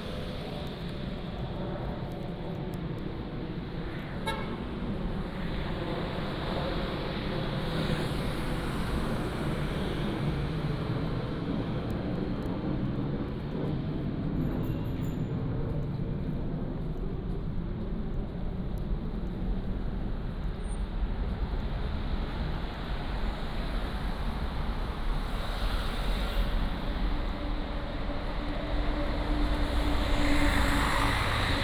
Bonghwang-dong, Gimhae-si, Gyeongsangnam-do, 韓国 - Walking in the Street
Walking in the Street, Traffic Sound
Gimhae, Gyeongsangnam-do, South Korea, 15 December 2014